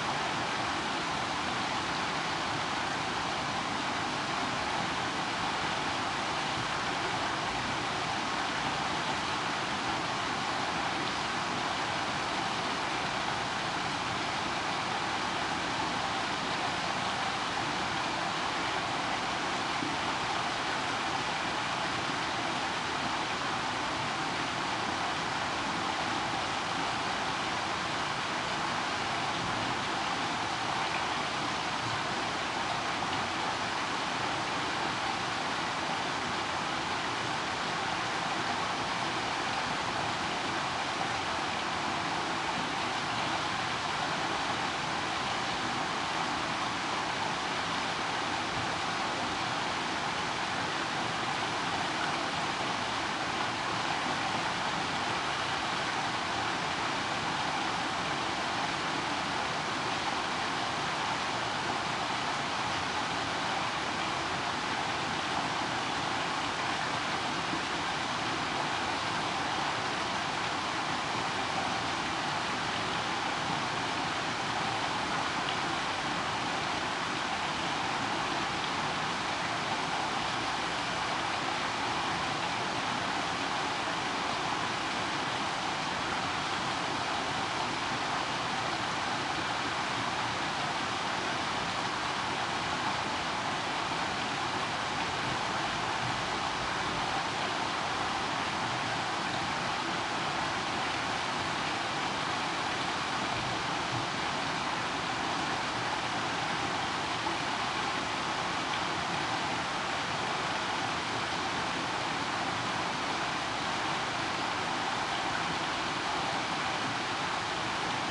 Kleine Dijk, Diksmuide, Belgium - Flanders Rain & Drone
Recorded with a Marantz PMD661 and a stereo pair of DPA 4060s